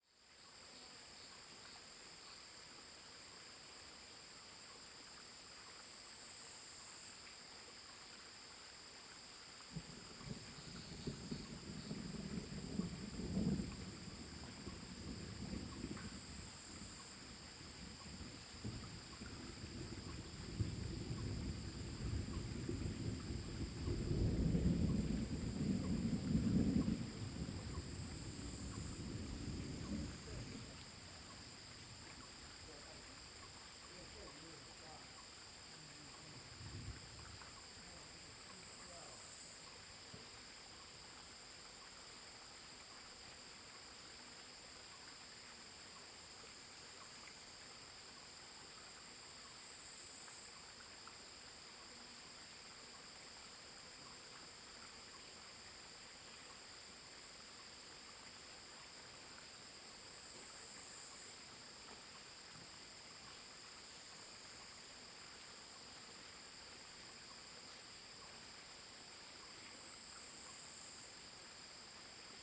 Take refuge。
Zoon H2n (XY+MZ) (2015/08/30 002), CHEN, SHENG-WEN, 陳聖文
30 August 2015, 14:30